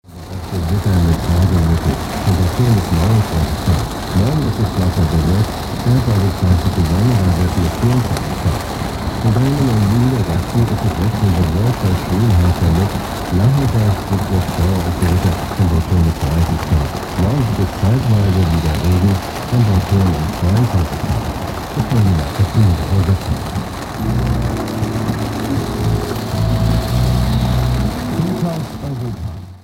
koeln, garage, rain - Köln, garage, rain
Heavy rain on the roof of car garage, inside radio playing.
recorded july 3rd, 2008.
project: "hasenbrot - a private sound diary"